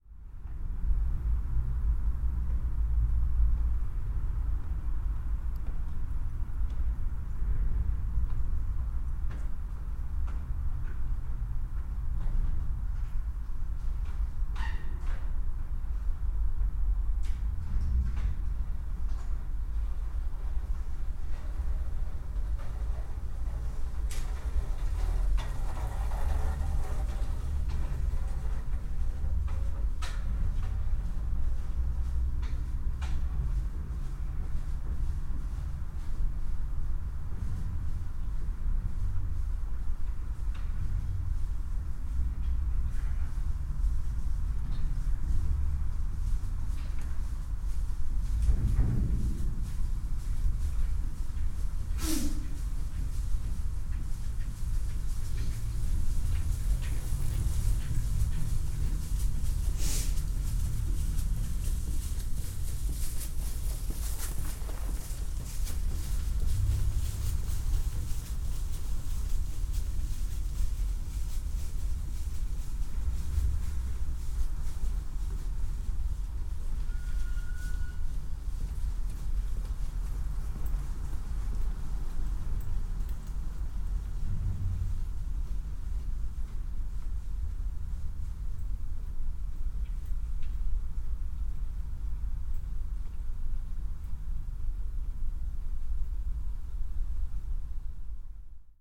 Rivierenbuurt-Zuid, The Hague, The Netherlands - tunnel
rocording in the middle of tunnel